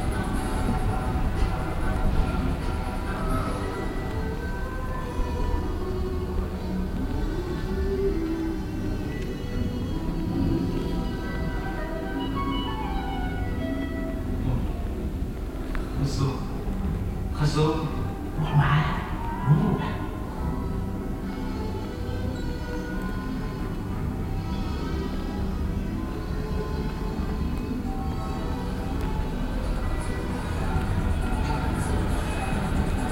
dortmund, phoenix halle, ausstellung hardware medienkunstverein
in grosser ehemaliger industriehalle, medienkunstausstellung, gang durch verschiedene exponate
soundmap nrw
social ambiences/ listen to the people - in & outdoor nearfield recordings